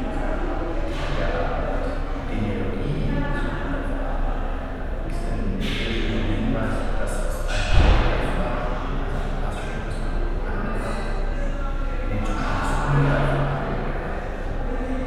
frankfurt/main, domstraße: museum für moderne kunst - the city, the country & me: museum of modern art
video during the exibition "franz west - where is my eight?"
the city, the country & me: september 27, 2013